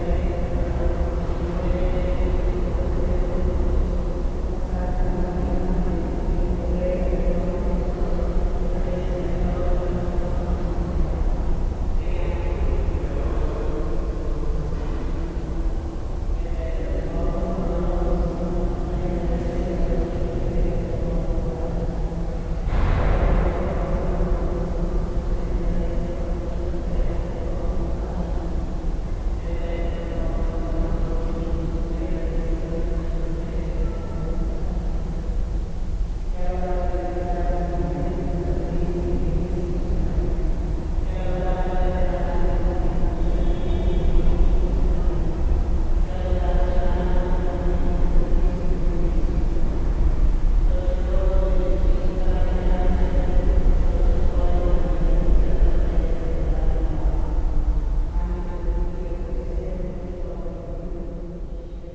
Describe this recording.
some women (italian?) praying in the empty church. recorded june 4, 2008. - project: "hasenbrot - a private sound diary"